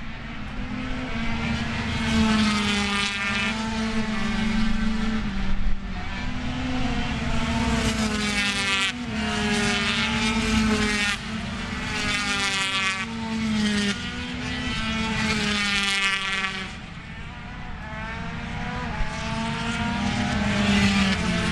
British Motorcycle Grand Prix 2003 ... free practice ... one point stereo mic to minidisk ... quite some buffeting ... time approx ...
11 July 2003, 09:00